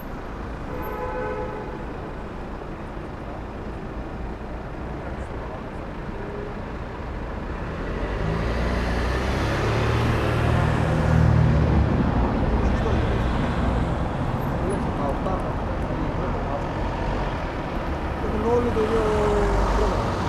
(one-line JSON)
{"title": "Berlin: Vermessungspunkt Friedelstraße / Maybachufer - Klangvermessung Kreuzkölln ::: 19.12.2011 ::: 19:06", "date": "2011-12-19 19:06:00", "latitude": "52.49", "longitude": "13.43", "altitude": "39", "timezone": "Europe/Berlin"}